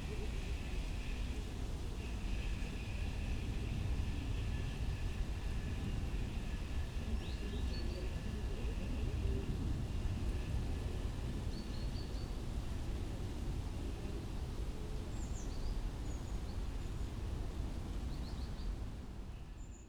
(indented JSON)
{
  "title": "Berlin, Tempelhofer Feld - under a tree, wind",
  "date": "2019-10-25 16:50:00",
  "description": "just sitting behind a little house, on the floor, under a tree, listening to the wind and a few leaves falling down\n(SD702, SL502ORTF)",
  "latitude": "52.48",
  "longitude": "13.41",
  "altitude": "47",
  "timezone": "Europe/Berlin"
}